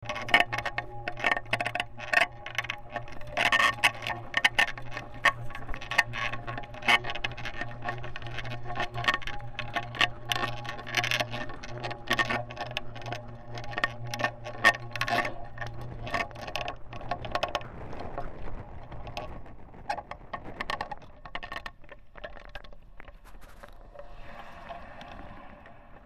Batman Bridge, Tasmania squeaky cable
Tamar River TAS, Australia